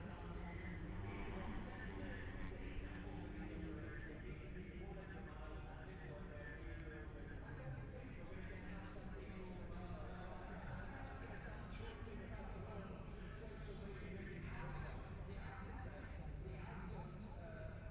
Silverstone Circuit, Towcester, UK - british motorcycle grand prix 2021 ... moto three ...
moto three qualifying two ... wellington straight ... olympus ls 14 integral mics ...
England, United Kingdom, 28 August 2021, 13:00